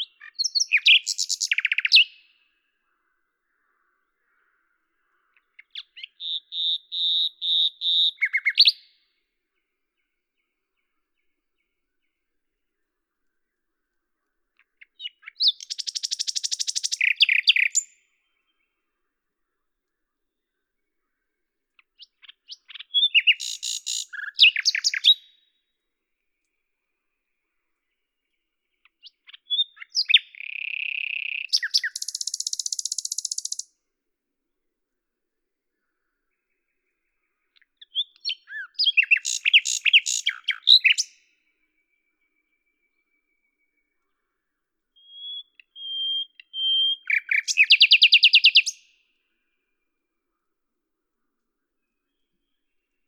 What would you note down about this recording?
10/05/1998, Tascam DAP-1 Micro Télingua, Samplitude 5.1